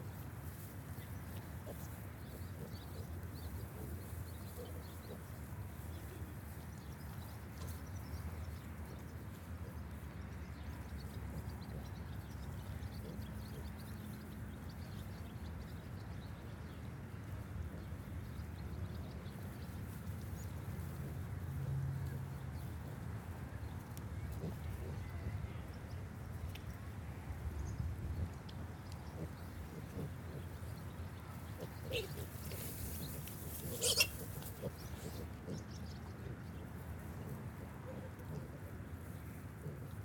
Pig field, Amners Farm, Burghfield, UK - Berkshire sow and piglets
This is the sound of a lovely Berkshire sow and her piglets in a field. These pigs are free range and get to snuffle about in the grass all day, but the little ones are very demanding, and constantly harassing mum for milk. When I was hanging out and listening, she didn't seem of a mind to give them any, and kept rounding on them to try and get them off her teats. The little squeals are the noises of baby pigs being shunted out of the way by their slightly grumpy mama, who just seemed to want to rootle in the mud in peace without the constant demands of the tiny piglets (who can blame her). It was amazing to hear the little squealy noises of the babies.
Reading, UK